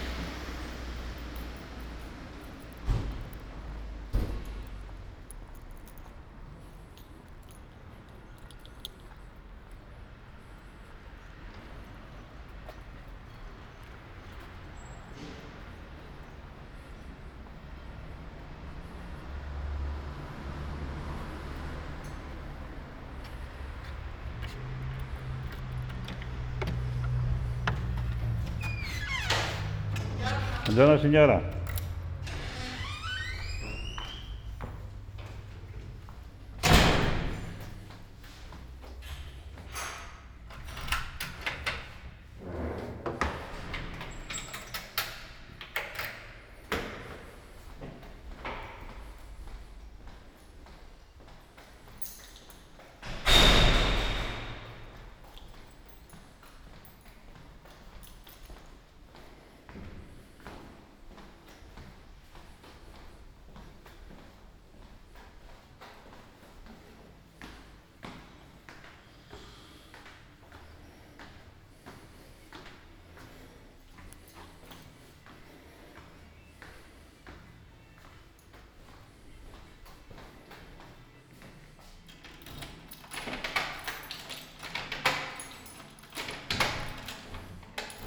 Ascolto il tuo cuore, città. I listen to your heart, city. Several chapters **SCROLL DOWN FOR ALL RECORDINGS** - “Outdoor market on Friday in the square at the time of covid19” Soundwalk
“Outdoor market on Friday in the square at the time of covid19” Soundwalk
Chapter CXXIII of Ascolto il tuo cuore, città. I listen to your heart, city.
Friday, August 7th, 2020. Walking in the outdoor market at Piazza Madama Cristina, district of San Salvario, Turin four months and twenty-seven days after the first soundwalk (March 10th) during the night of closure by the law of all the public places due to the epidemic of COVID19.
Start at 8:49 a.m., end at h. 9:04 a.m. duration of recording 15:15”
The entire path is associated with a synchronized GPS track recorded in the (kml, gpx, kmz) files downloadable here:
Torino, Piemonte, Italia, 7 August 2020